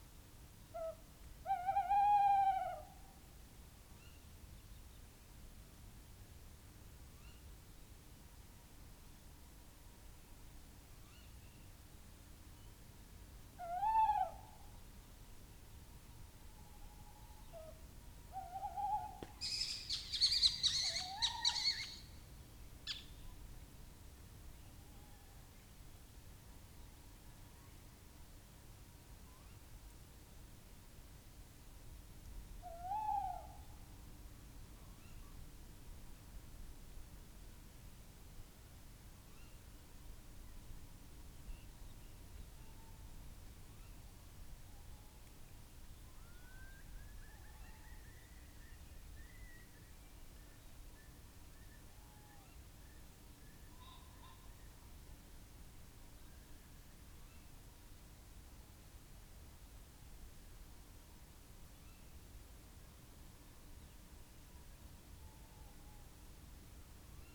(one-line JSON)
{"title": "Luttons, UK - three owl calls ... early morning ...", "date": "2017-04-01 05:20:00", "description": "Three owl calls ... tawny ... little ... barn ... open lavalier mics clipped to hedgerow ... bird calls from ... curlew ... pheasant ... skylark ... redwing ... blackbird ... some background noise ... tawny calling first ... later has an altercation with little owl ... barn owl right at the end of track ... lots of space between the sounds ...", "latitude": "54.12", "longitude": "-0.54", "altitude": "76", "timezone": "Europe/London"}